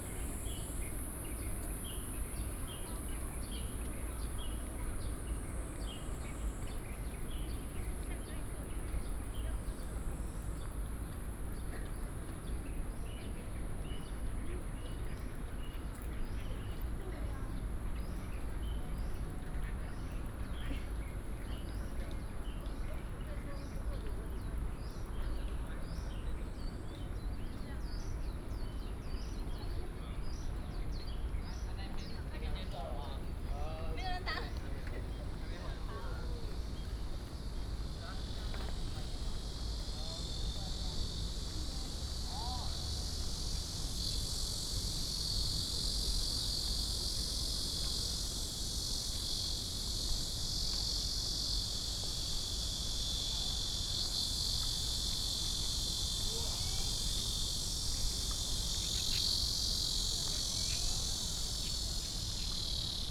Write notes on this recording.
Walking in the university, Holiday Many tourists, Very hot weather